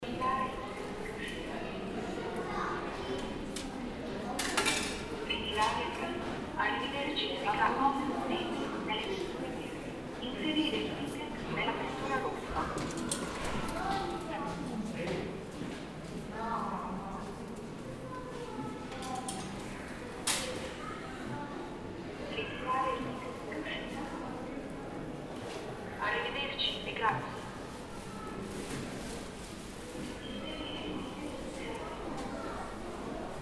Varese, Norditalien, Ausfahrt Tiefgarage
Varese, Einkaufzentrum, Ausfahrt à la Italia, Varese, ein Eldorado für Schokolade und ein Spaziergang in den Arkaden